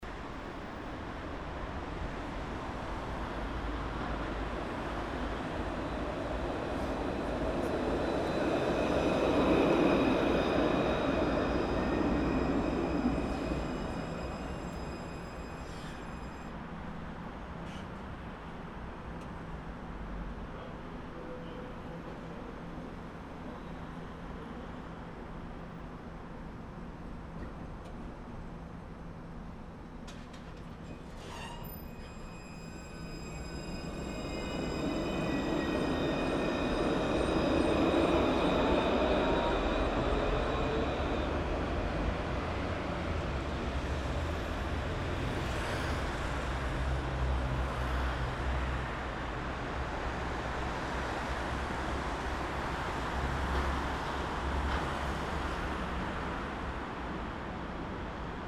ambiance enregistrée lors du tournage le 3° jours en novembre, Decembre 2009
Grenoble, France